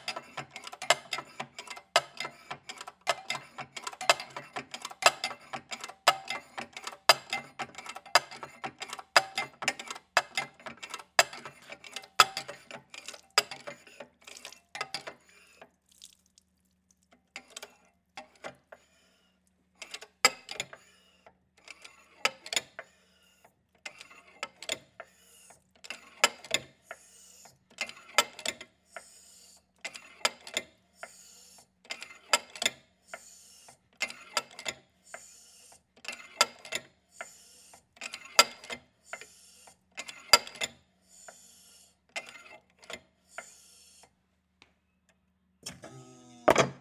Rue Bernard Chochoy, Esquerdes, France - Esquerdes - Maison du Papier
Esquerdes (Pas-de-Calais)
Maison du papier
La presse manuelle
Hauts-de-France, France métropolitaine, France, 28 March 2022